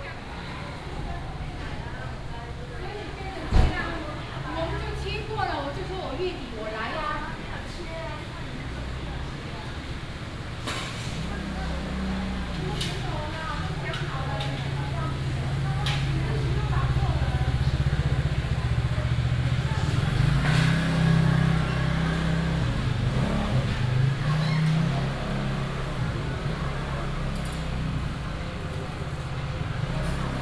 關西公有零售市場, Guanxi Township - Walking through the market
Walking through the market, Traffic sound, Traditional market
Guanxi Township, Hsinchu County, Taiwan